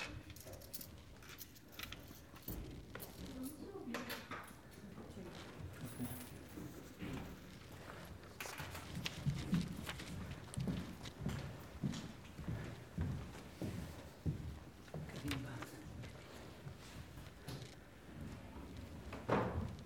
climbing the stairs and taking the lift to the observation deck on the church.
October 2009